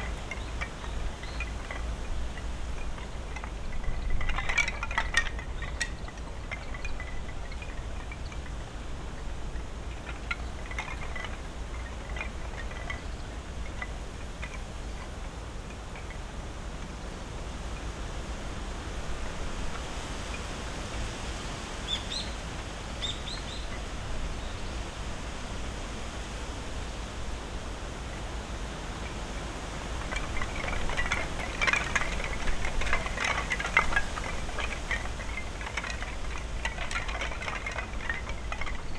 Takano Shrine, Rittō-shi, Shiga-ken, Japan - Takano Shrine on a Windy December Day
At Takano Shrine in Ritto City, Shiga Prefecture, Japan, we can hear wind blowing through high trees in the sacred grove; noisy aircraft, traffic, and other human sounds; several species of birds; and the clatter of wooden prayer tablets that hang near the main sanctuary.
12 December